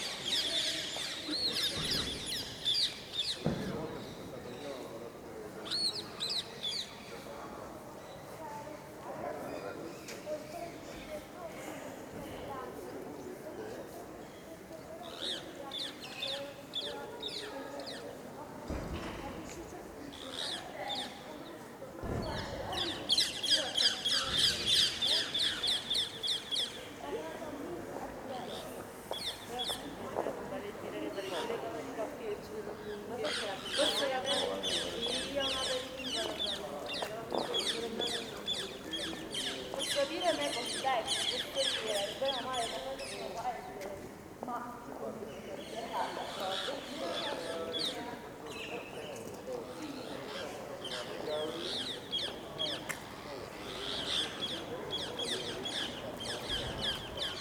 Leonardo Square, Univeristy of Pavia, Italy - birds on the medieval towers
in front of the three medieval towers, also courtyard of the University, lots of different kind of birds make their nest in the holes of the tower. People passing by in the background.
27 October 2012, 15:30